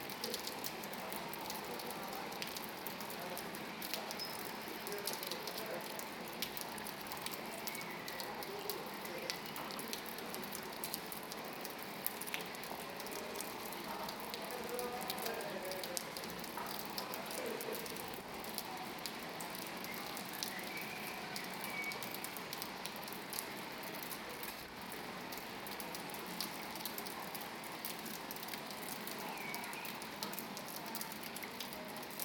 Kidričeva ulica, Bevkov trg - a jet of water
6 June 2017, 17:49